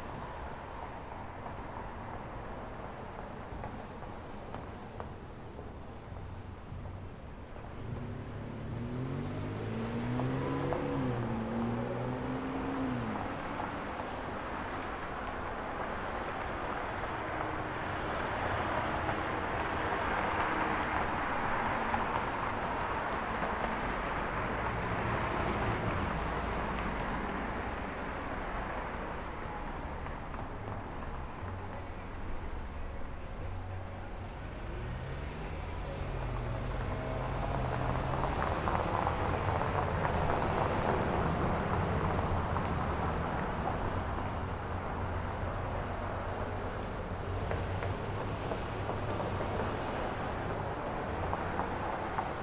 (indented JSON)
{
  "title": "S Gilbert St, Iowa City, IA, USA - Iowa City Street",
  "date": "2022-01-23 21:15:00",
  "description": "Recorded on top of the railroad track above S. Gilbert St. Recorded on H5N Zoom",
  "latitude": "41.65",
  "longitude": "-91.53",
  "altitude": "200",
  "timezone": "America/Chicago"
}